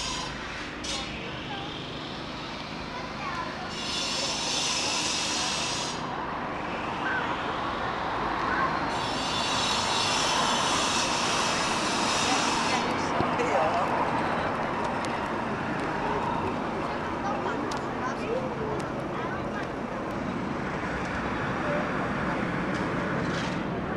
Tallinn, Mere puiestee - kids and workers
preschool kids play around Russian culture centre supervised by two kindergartners while workers repair the road. children, play, drill, Vene Kultuurikeskus